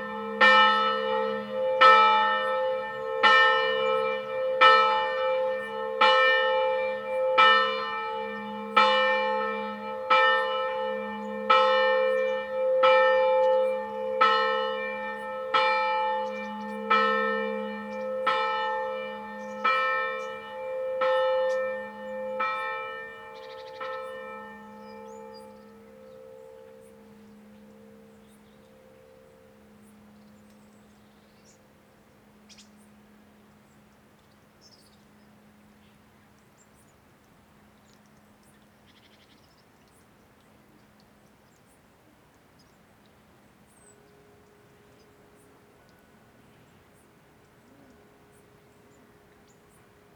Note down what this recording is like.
[Hi-MD-recorder Sony MZ-NH900, Beyerdynamic MCE 82]